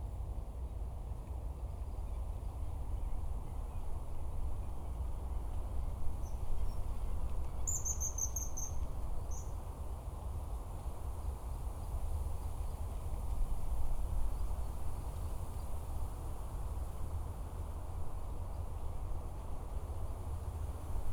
布袋濕地生態園區, Budai Township, Chiayi County - Wetland area
Wetland area, Bird sounds, Wind, Traffic sound
SoundDevice MixPre 6 +RODE NT-SF1 Bin+LR
嘉義縣, 臺灣省, Taiwan